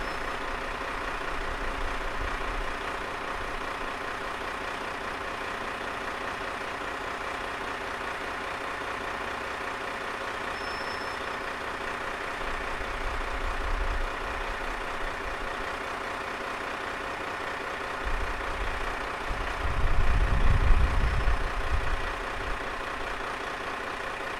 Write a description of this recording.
SU42 train after modernization standing on the platform. The recording comes from a sound walk around the Zawarcie district. Sound captured with ZOOM H1.